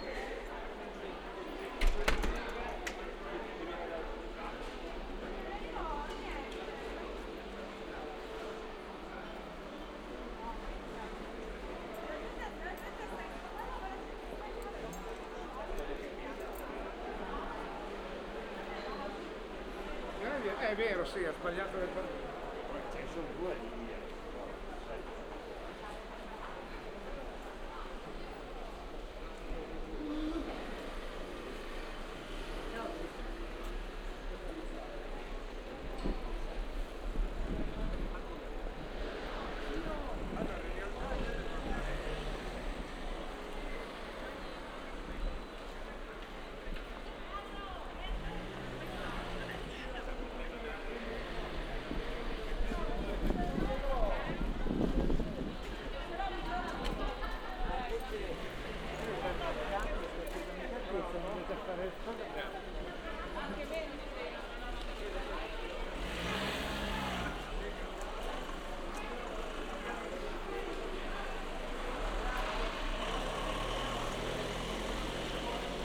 "Round Noon bells on Saturday with open market in the time of COVID19" Soundwalk
Chapter XVIX of Ascolto il tuo cuore, città. I listen to your heart, city
Saturday April 18th 2020. San Salvario district Turin, walking to Corso Vittorio Emanuele II and back, thirty nine days after emergency disposition due to the epidemic of COVID19.
Start at 11:55 p.m. end at 12:20p.m. duration of recording 35'30''
Files has been filtered in post editing to limit wind noise.
The entire path is associated with a synchronized GPS track recorded in the (kmz, kml, gpx) files downloadable here:
Torino, Piemonte, Italia, 18 April 2020, 11:55